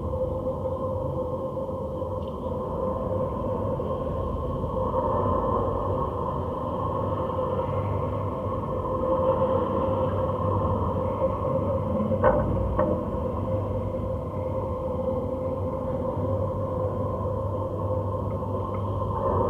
Litvínov, Czech Republic - Kopisty (the inside of the pipes)
Most Basin, North Bohemia
These sounds were recorded in the area of the former village of Kopisty. Kopisty was demolished (in the 70's) to make way for the expanding mines and petrochemical industries. There are many kilometers of pipes in the landscape. There is a black liquid tar flowing inside these pipes. Equipment: Fostex FR2, contact microphones.